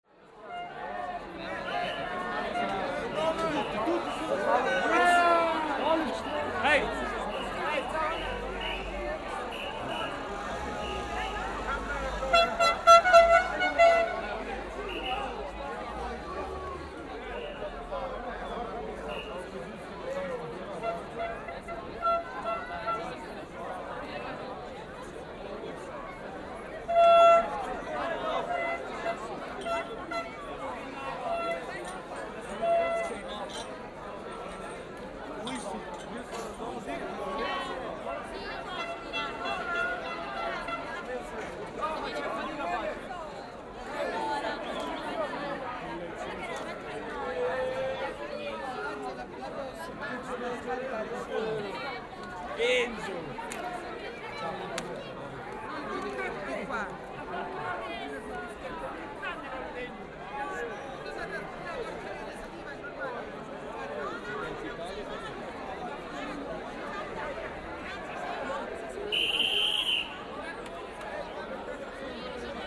After Italy won against Spain in the European Championship fans are meeting on the street, at a circle, at a certain point the police is arriving.